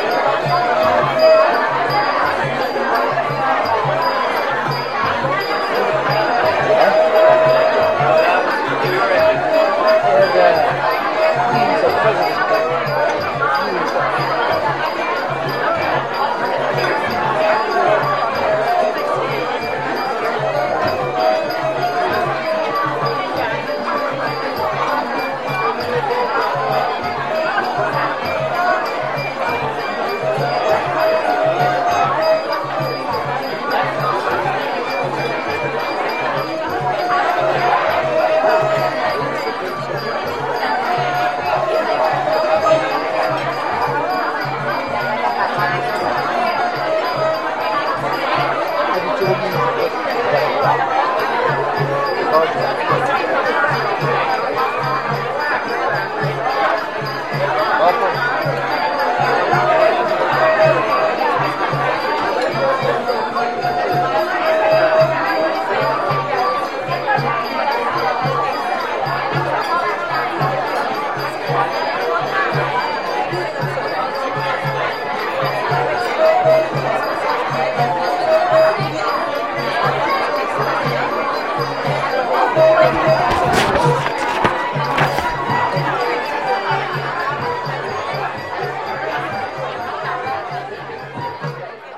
Khong dance dinner in Old culture center, Chiang Mai; 26, Jan, 2010